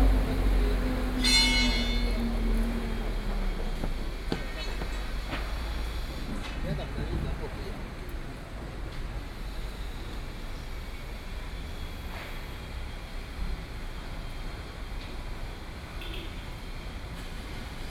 Ln., Sec., Heping E. Rd., Da’an Dist. - in the street
Taipei City, Taiwan, 12 November 2012